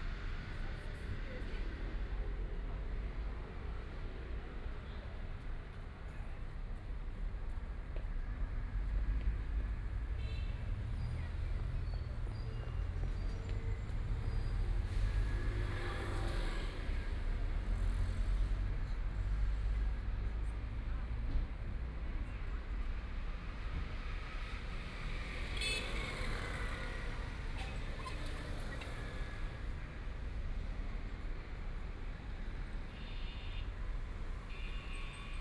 Hankou Road, Shanghai - In the corner of the road
In the corner of the road, The crowd, Bicycle brake sound, Traffic Sound, Binaural recording, Zoom H6+ Soundman OKM II
Shanghai, China, 25 November 2013